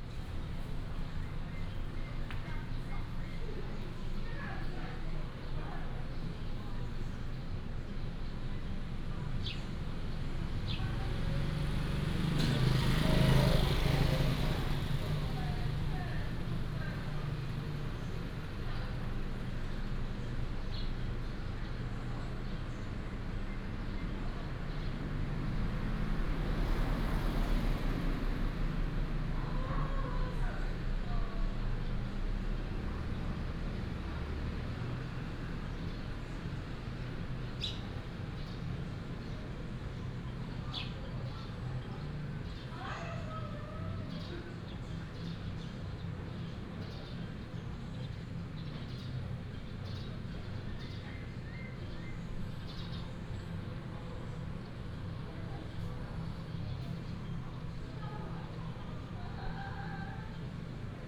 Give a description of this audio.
small Town, The sound of birds, traffic sound, Binaural recordings, Sony PCM D100+ Soundman OKM II